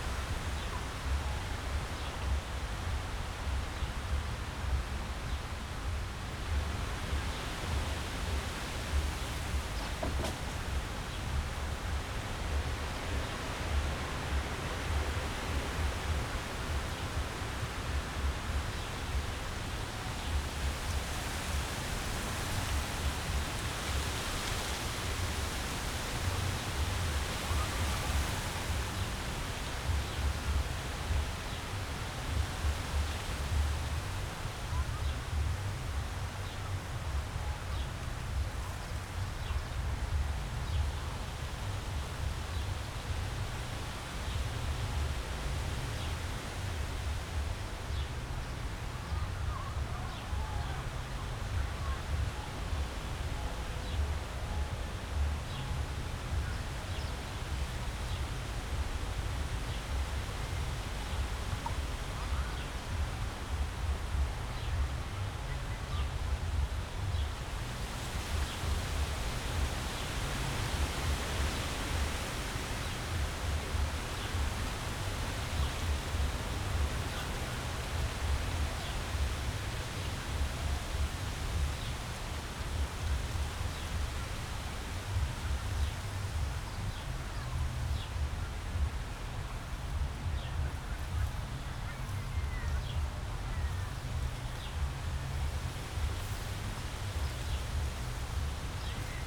Tempelhofer Feld, Berlin, Deutschland - late spring, fresh wind
Weekend afternoon late spring, a fresh wind in the poplar trees, drone of a remote sound system
(Sony PCM D50, DPA4060)
Berlin, Germany, 15 June 2019